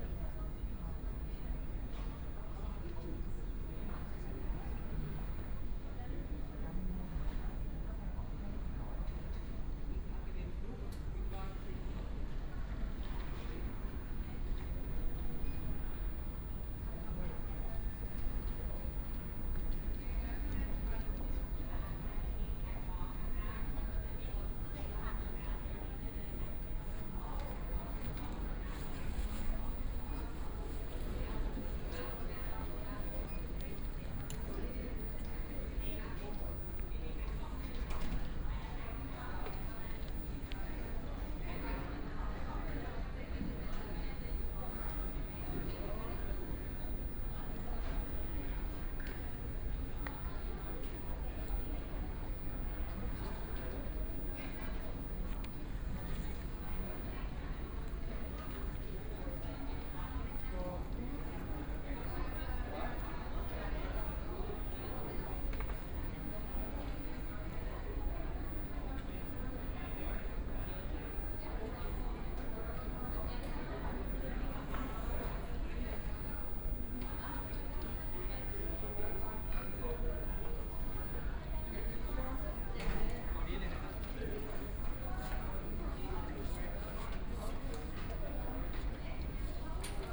Airport lobby, A lot of tourists, Footsteps
Munich, Germany, 11 May, 19:25